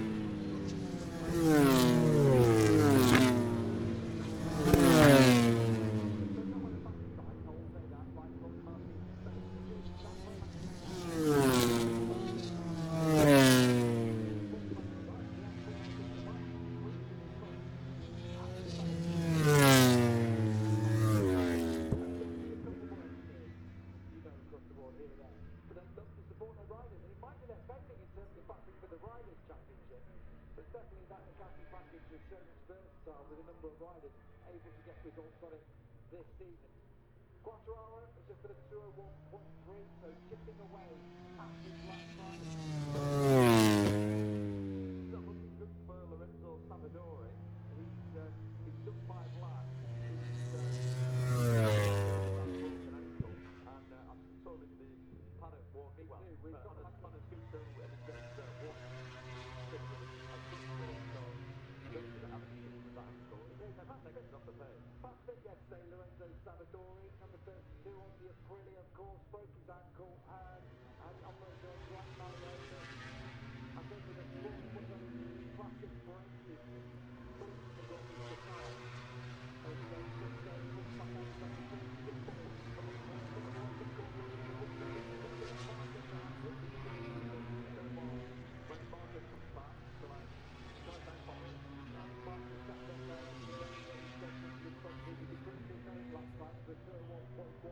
Silverstone Circuit, Towcester, UK - british motorcycle grand prix 2021 ... moto grand prix ...
moto grand prix free practice one ... maggotts ... olympus ls 14 integral mics ...